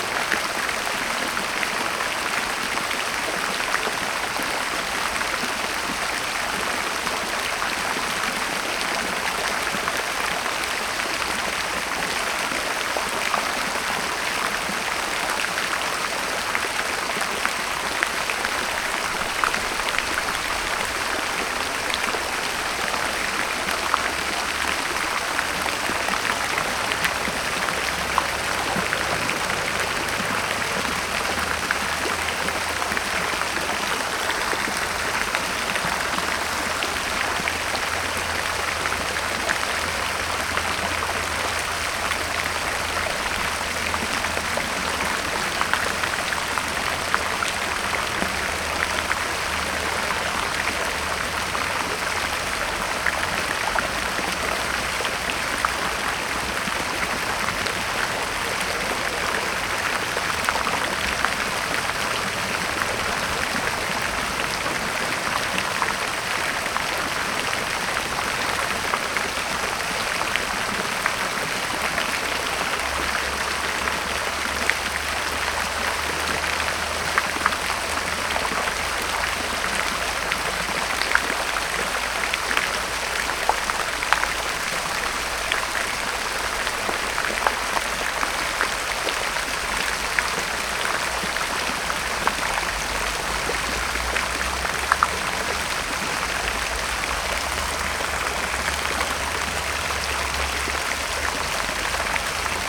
Śródmieście Północne, Warszawa - Fontanna Palac Mlodziezy
Fontanna Palac Mlodziezy w Pałac Kultury i Nauki, Warszawa